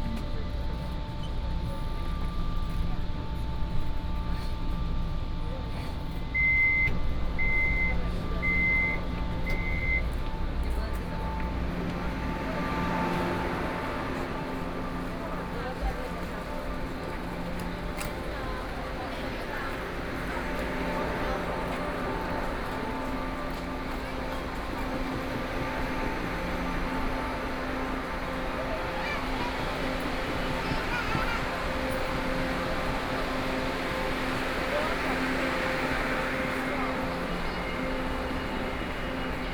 新興區新江里, Kaoshiung City - Red Line (KMRT)
from Kaohsiung Main Station to Formosa Boulevard station